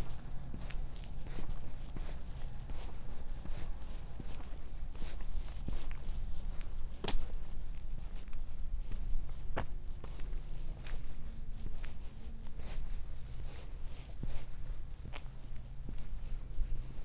two cats, one of them silent, walk, cars and toot, passer by, trash can, dry leaves
28 December 2012, 17:10